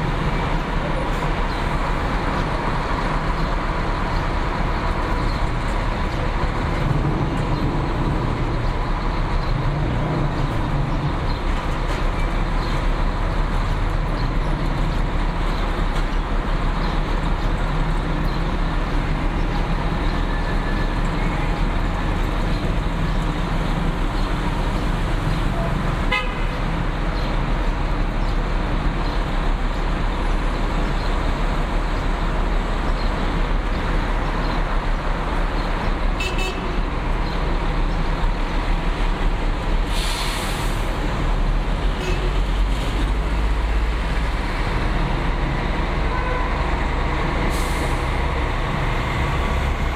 Recorded from hotel balcony in the morning.